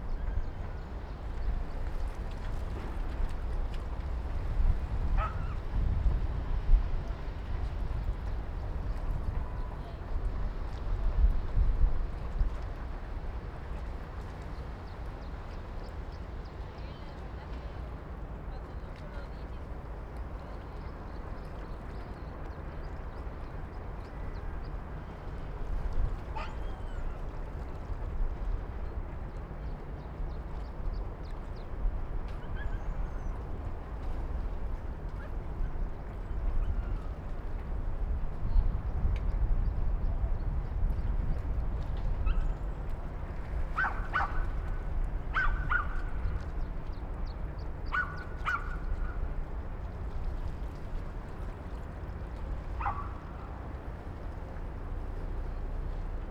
Las Palmas, Gran Canaria, morning soundscape